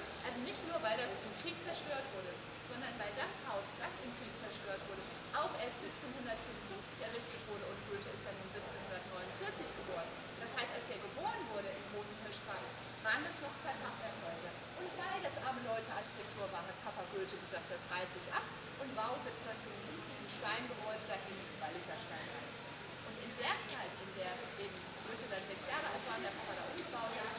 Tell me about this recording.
Walk through rather silent pathways, talk about the opening and that many yards will be closed then, a guide is talking about the new 'old town' as disneyland and the inhabitants of the city. Talking about the Hühnermarkt and Friedrich Stolze who reminds of Marx - another guide is talking about the Goethe-Haus, that is not original in a double sense and about Struwelpeter, the upcoming museum that reminds of this figure, that is 'coming back' to the 'old town', bells are tolling. Binaural recording.